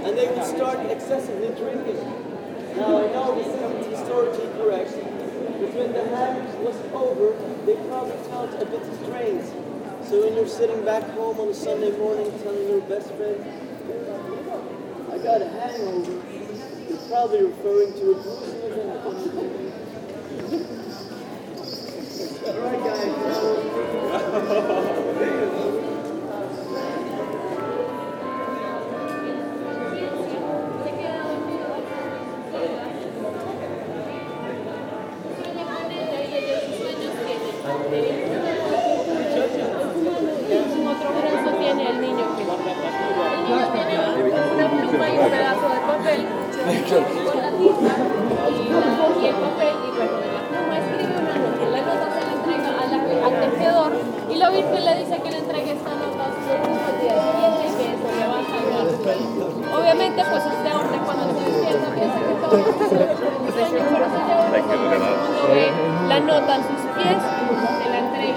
Brugge, België - Bruges belfry
Belfort. A flood of tourists near the belfry, whose carillonneur plays with an undeniable talent.